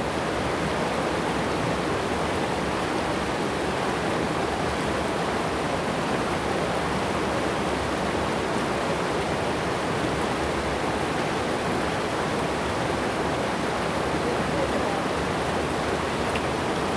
玉門關, 種瓜坑溪, 埔里鎮成功里 - Stream
Stream
Zoom H2n MS+XY
Puli Township, Nantou County, Taiwan